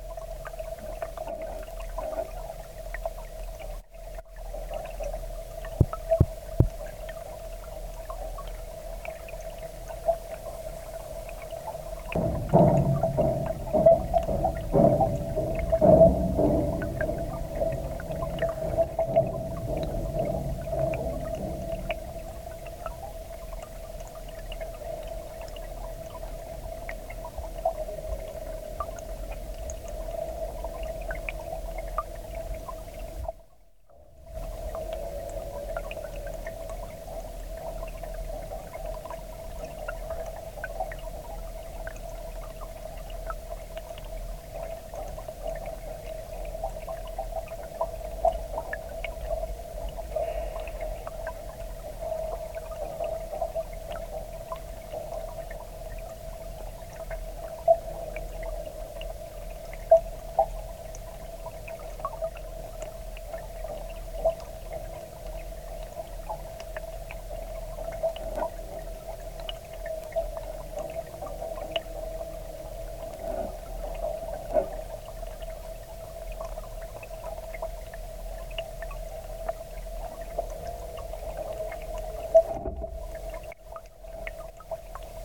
hydrophone recording of water draining back from the high tide into the sea
Sint Jacobiparochie, The Netherlands, November 2014